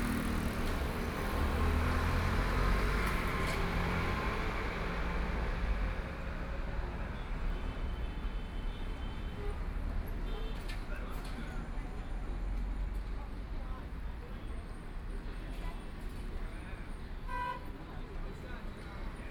Sitting in the corner, Traffic Sound, Near the old settlements, Residents voice conversation, Binaural recording, Zoom H6+ Soundman OKM II